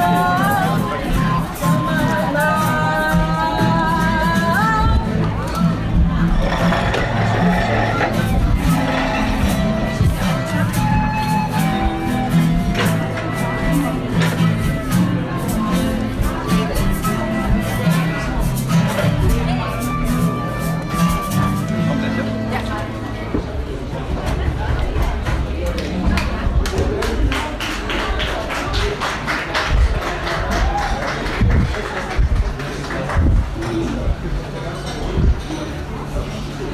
Fidel-Kreuzer-Straße, Bad Wörishofen, Deutschland - Bio StreetFood Markt
A walk around the Bio StreetFood Market/ 10 Years anniversary, Bio Oase
Bayern, Deutschland, 21 May 2022, 12:00